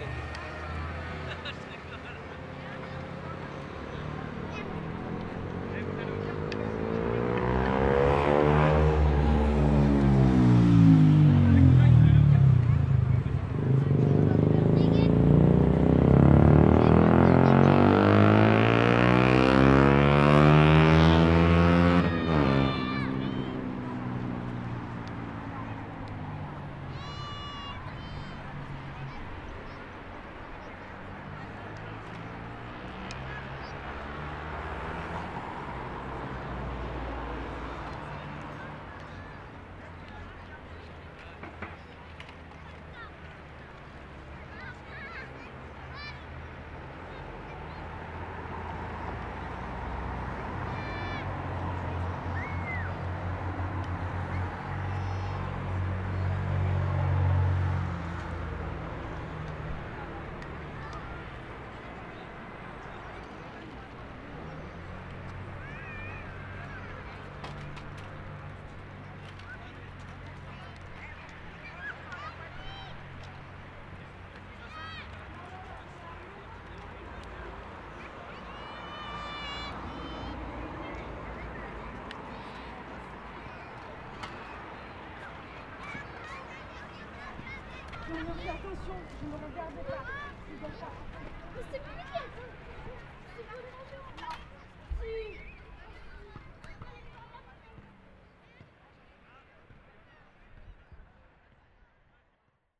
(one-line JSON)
{"title": "Villers-sur-Mer, France - Greenwich", "date": "2017-04-08 17:40:00", "description": "Ambiant on the beach (children taping on metal ramp, and noisy motor bike passing) at Greenwich Meridian, Villers-sur-mer, Normandy, France, Zoom H6", "latitude": "49.33", "longitude": "0.00", "altitude": "2", "timezone": "Europe/Paris"}